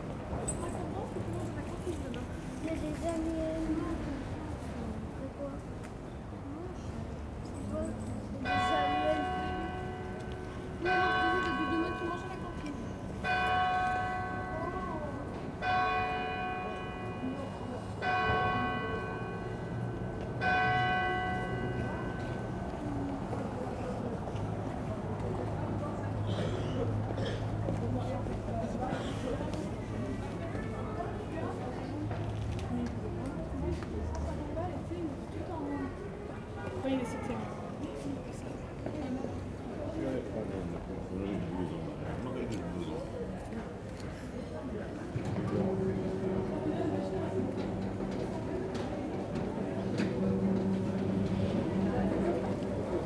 Lyon, Cathédrale Saint-Jean, an old minidisc recording from 1999.